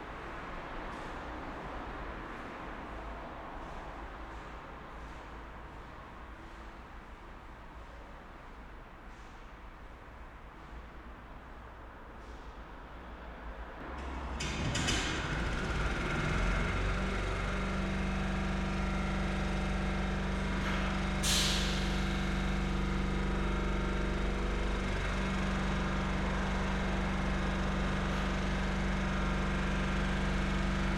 Maribor, Studenci station - waiting room
waiting room ambience at Studenci station, later a train engine starts.
(SD702 AT BP4025)
Maribor, Slovenia, 30 May 2012, ~13:00